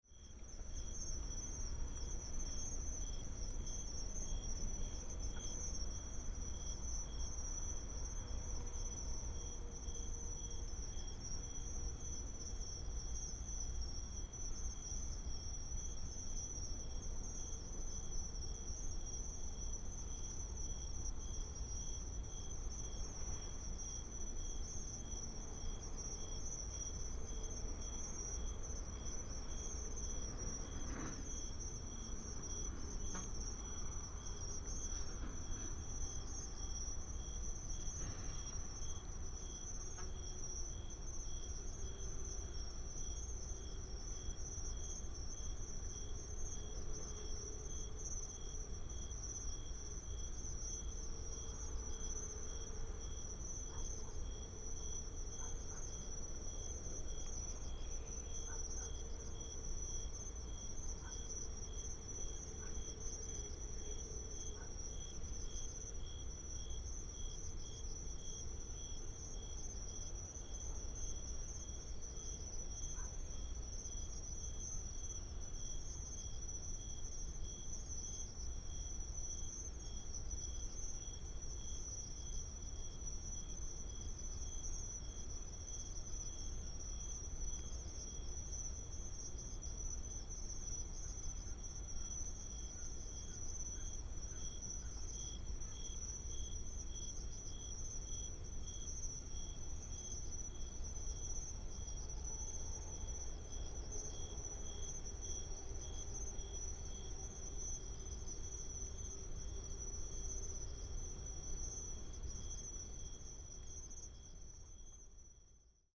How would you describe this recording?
Insects, Dog sounds, Traffic sound, Beside the farmland and the grass, Binaural recordings, Sony PCM D100+ Soundman OKM II